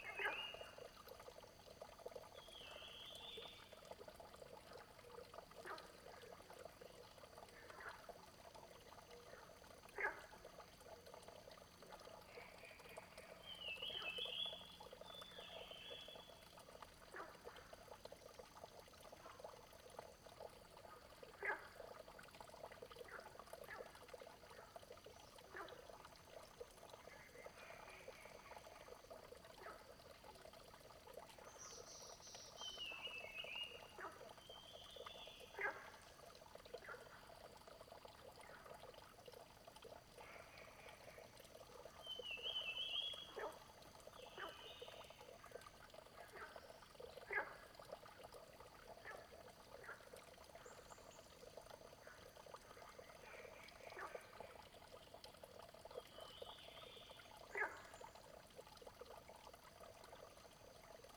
三角崙, 魚池鄉五城村, Nantou County - Flow and Frogs sound
Flow, Bird sounds, Frogs chirping, Firefly habitat area, Dogs barking
Zoom H2n MS+XY